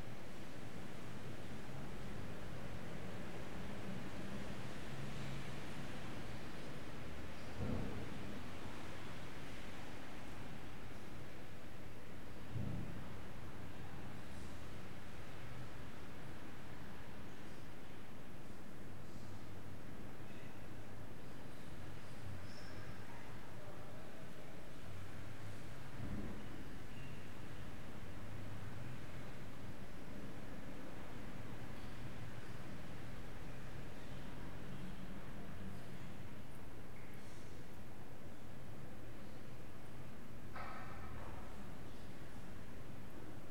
São Sebastião, Portugal - Igreja Matriz
The preaching sound at Igreja Matriz, and the reverberation architecture.
Açores, Portugal, 12 August 2019